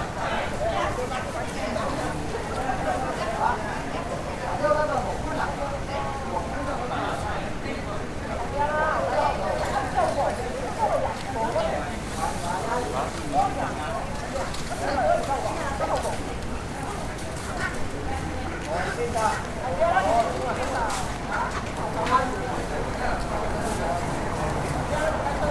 El Dorado, Panamá, Panama - Ambiente mercado chino, domingos
Todos los domingos se crea un mini mercado Chino donde casi todos los Chinos se suplen de alimentos frescos, gallinas vivas, patos vivos, legumbres etc...
19 March, 06:35